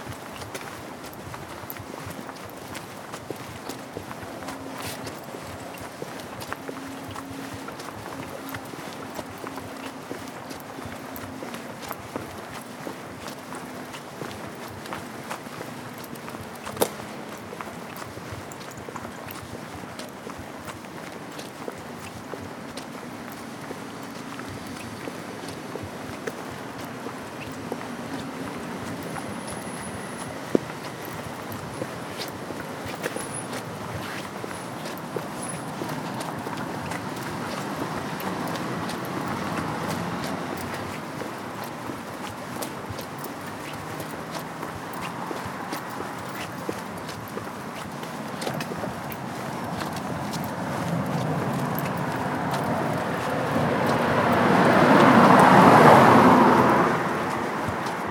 small urban residential road. Surrounded by gardens and houses. Condell road traffic noise in a distance. Garden birds. Car.